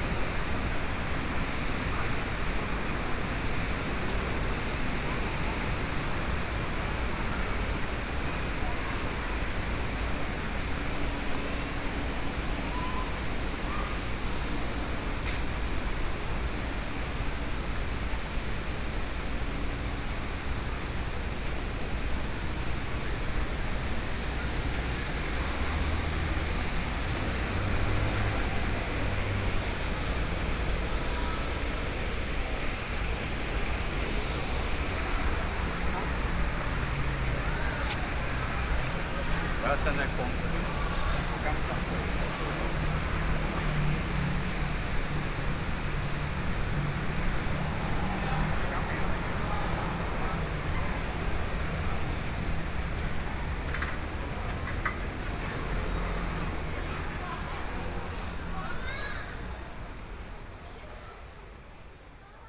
Beitou Library, Taipei City - Soundwalk
walking in the Park, at night, Sony PCM D50 + Soundman OKM II